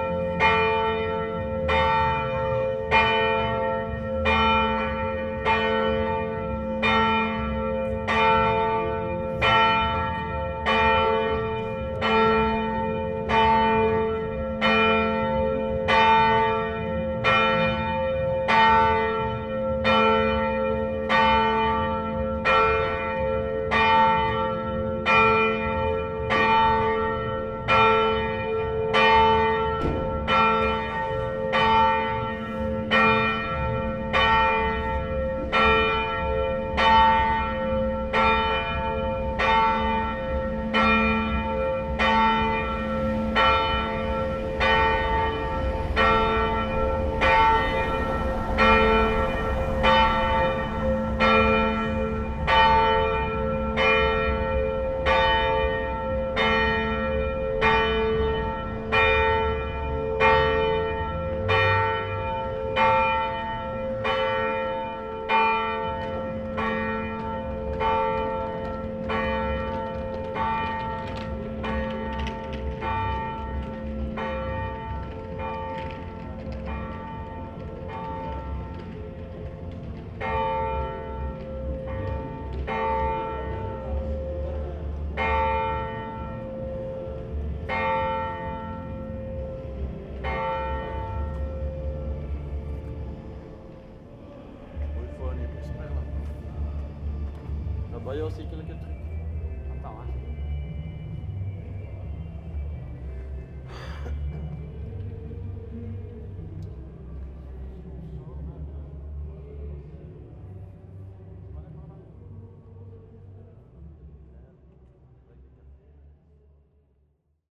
Aalst, België - Aalst bells
The Aalst church bells and terrible distant sound of the local market (but all the city is like that).